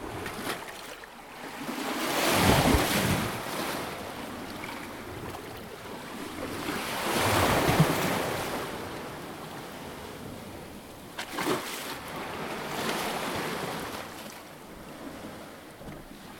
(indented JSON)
{
  "title": "Lystis beach, Viannos, Greece - waves on the rock",
  "date": "2017-09-03 11:18:00",
  "description": "The recording was performed while I was on the water.",
  "latitude": "34.99",
  "longitude": "25.36",
  "altitude": "5",
  "timezone": "Europe/Athens"
}